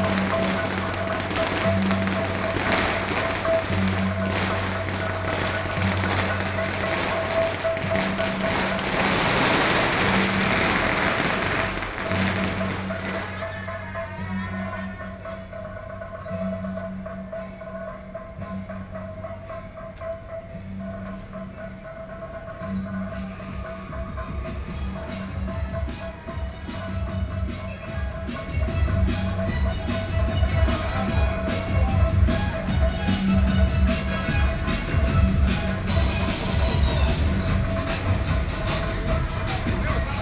Jinghou St., Wenshan Dist., Taipei City - SoundMap20121128-4